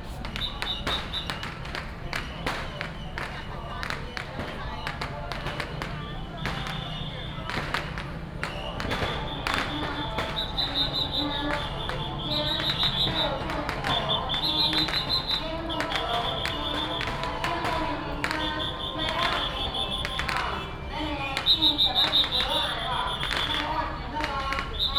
Firecrackers and fireworks, Many people gathered at the intersection, Matsu Pilgrimage Procession
Yunlin County, Taiwan, 1 March, 15:52